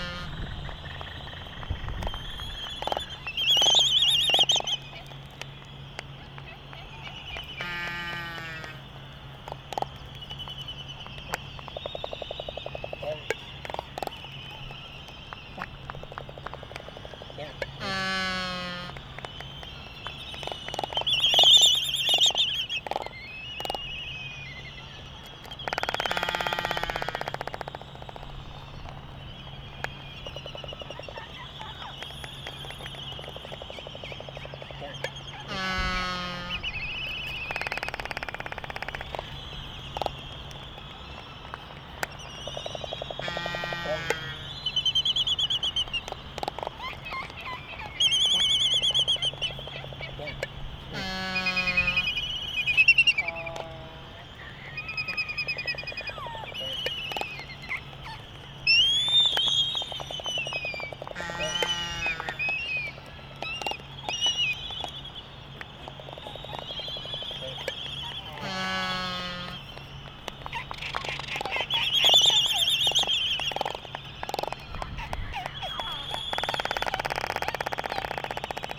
United States Minor Outlying Islands - Laysan albatross dancing ...
Laysan albatross dancing ... Sand Island ... Midway Atoll ... calls and bill clapperings ... white terns ... black noddy ... canaries ... open Sony ECM 959 one point stereo mic to Sony Minidisk ... warm ... sunny ... blustery morning ...
27 December 1997, 11:30